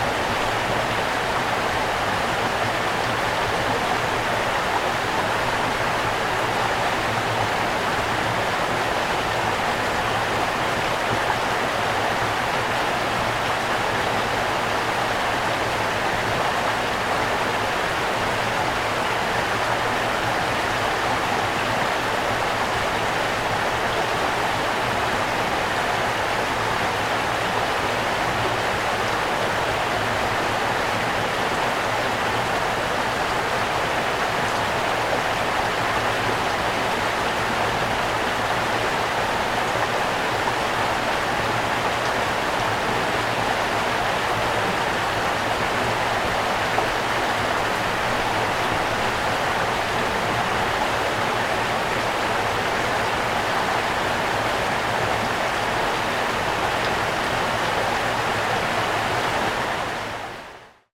This is one of the coldest places of Foia, a place called Barranco do Preto. Here, we can hear a small brook reverberating in the valley.
This is one of the first field recordings of my girlfriend.
She used the ZOOM H6 as a recorder and the MS mic of it. She also used the shotgun mic - The T.Bone EM9900.
My girlfriend used the shotgun for details and the ZOOM H6 mic for the ambient.
This sound is the composition of the two recorded tracks.
Hope you like it.
26 December 2014, ~18:00